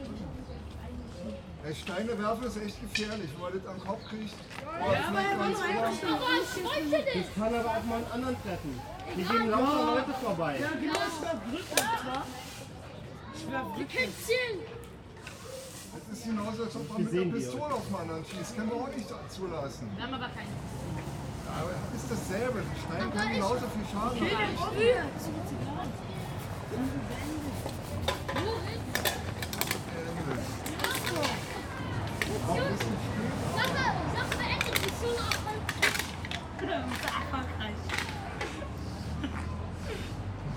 Koloniestraße, Berlin - children in front of Frisbee (youth center), whining dog. In front of the Frisbee e.V. youth center, a group of teenagers equipped with brooms is told to sweep the leaves from the sidewalk. Some of them throw pebbles instead.
[I used the Hi-MD-recorder Sony MZ-NH900 with external microphone Beyerdynamic MCE 82]
Koloniestraße, Berlin - Kinder vor dem Jugendzentrum Frisbee e.V., jaulender Hund. Vor dem Jugendzentrum ist eine Gruppe von Jugendlichen mit Besen ausgerüstet, um den Gehsteig zu fegen. Statt dessen werfen einige von ihnen lieber mit kleinen Steinen aufeinander.
[Aufgenommen mit Hi-MD-recorder Sony MZ-NH900 und externem Mikrophon Beyerdynamic MCE 82]